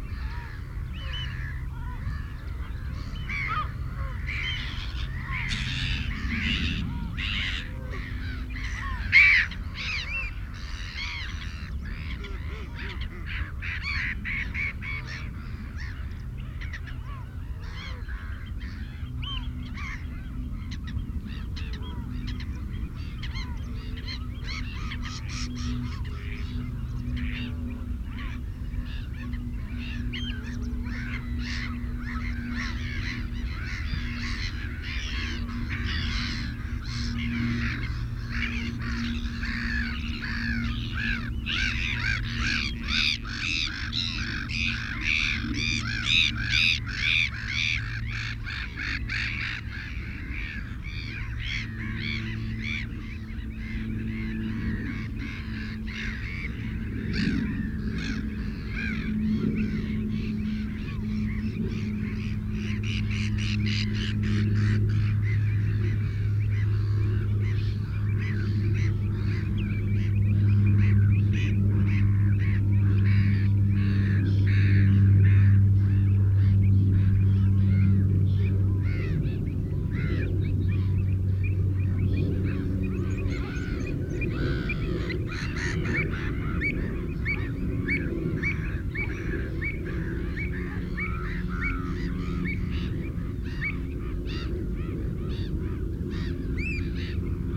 Stone Cottages, Woodbridge, UK - Belpers Lagoon soundscape ...
Belper's Lagoon soundscape ... RSPB Havergate Island ... fixed parabolic to cassette recorder ... birds calls ... song ... black-headed gull ... herring gull ... canada goose ... shelduck ... avocet ... redshank ... oystercatcher ... ringed plover ... lapwing ... linnet ... meadow pipit ... much background noise ... from planes and boats ...